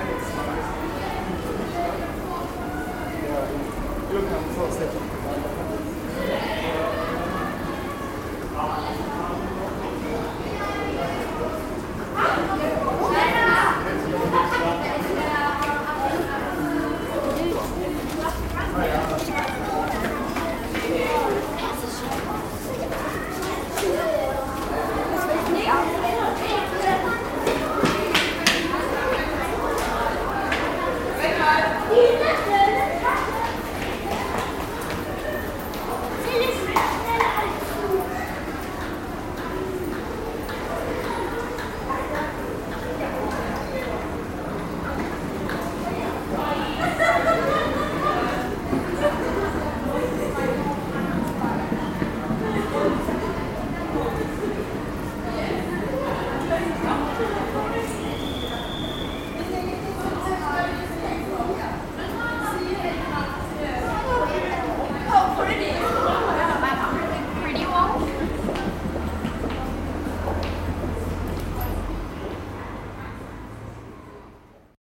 recorded june 28th, 2008, around 10 p. m.
project: "hasenbrot - a private sound diary"
Mannheim, Germany